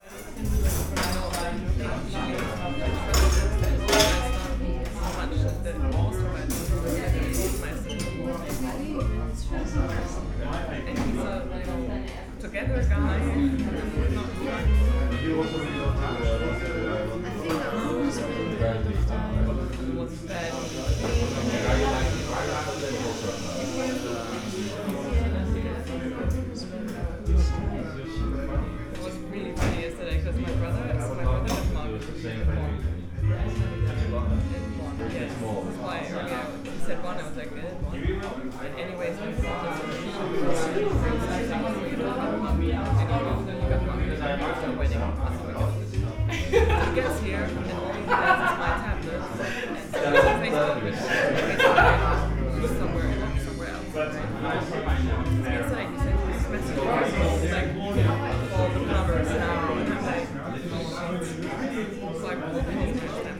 friedelstraße: der sturm - cafe ambience, connecting future (attempt)
weincafe, friedelstr., berlin, a cold and gray spring day, early evening, cafe ambience. connecting a moment in time with nother one in the future. for franca.
Berlin, Germany, 29 May 2014, ~20:00